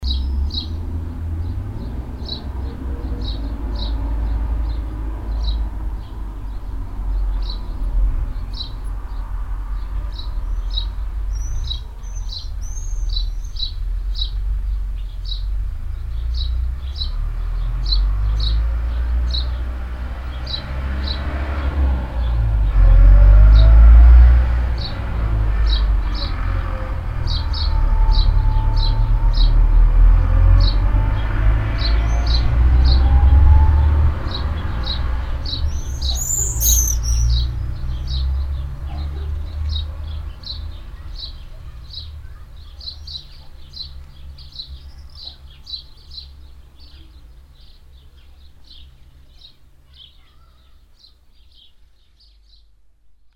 munshausen, tractor, swallows
A tractor passing by and two disturbed swallows flying fast and chirping close above my head.
Munshausen, Traktor, Schwalben
Ein Traktor fährt vorbei und zwei davon aufgeschreckte Schwalben fliegen schnell und zwitschern nahe über meinem Kopf.
Munshausen, tracteur hirondelles
Un tracteur passe et deux hirondelles dérangées s’envolent en piaillant juste au dessus de ma tête.
Project - Klangraum Our - topographic field recordings, sound objects and social ambiences